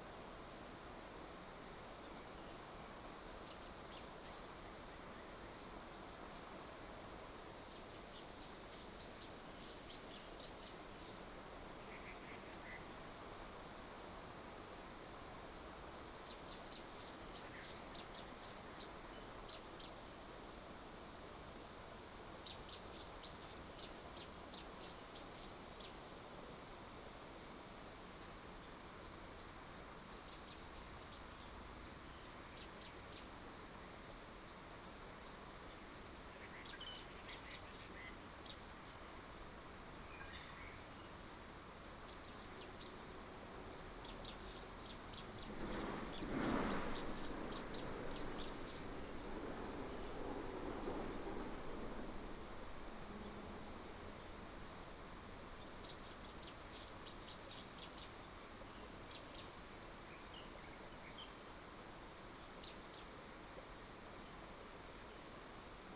雙流國家森林遊樂區, Shizi Township - Stream and birds sound
Entrance to a forest recreation area, in the morning, Traffic sound, Bird call, Stream sound
Binaural recordings, Sony PCM D100+ Soundman OKM II
Shizi Township, 丹路二巷23號